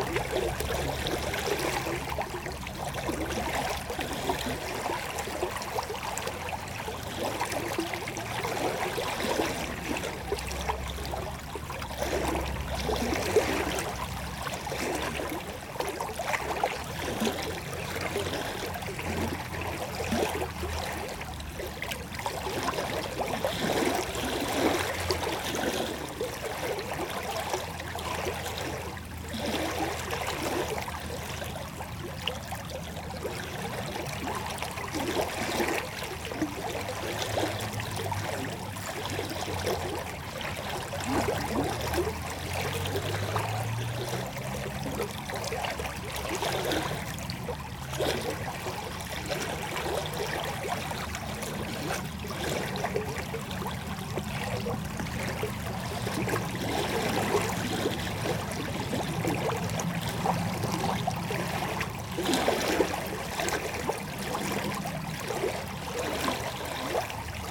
Court-St.-Étienne, Belgique - River L'Orne
A river called l'Orne, in a pastoral landcape.